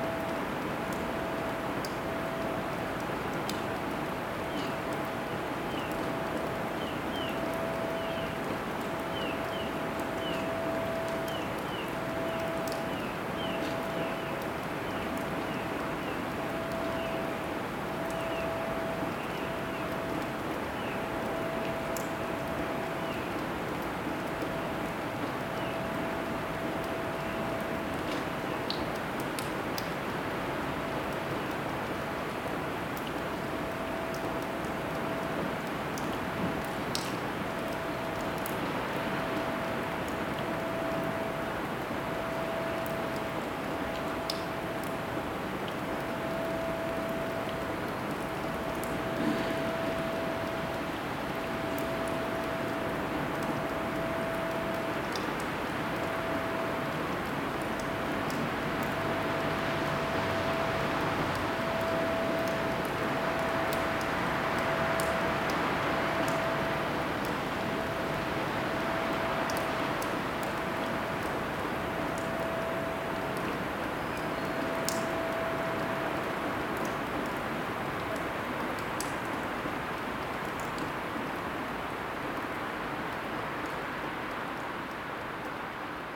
Rue Devant les Grands Moulins, Malmedy, Belgique - Morning ambience
Drone from the air conditionning system, water drops and a few birds.
Tech Note : Sony PCM-D100 internal microphones, wide position.